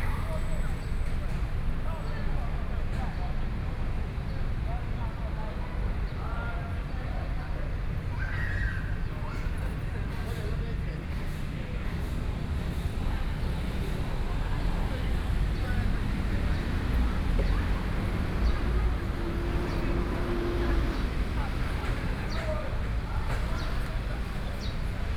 Walking through the park, Traffic Sound